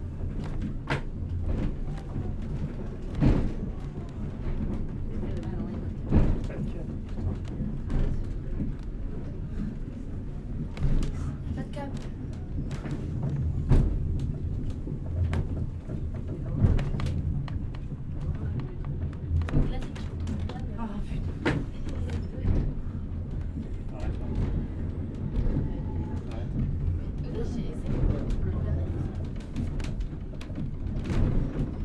Funikularea, Gipuzkoa, Espagne - Funikularea 01
finicular
Captation ZOOMH6
28 May, 14:25